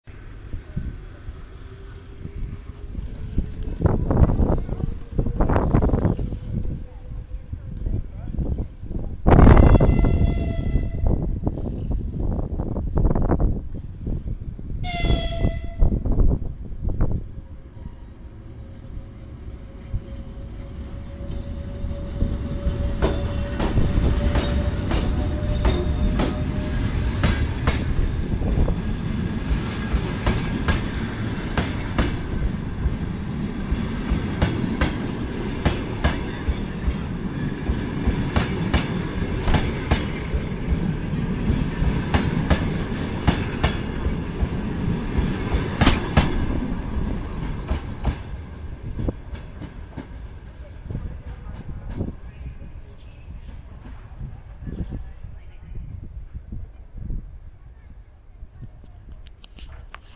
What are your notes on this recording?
Simple dictophone. Train wheels sound and wind sound.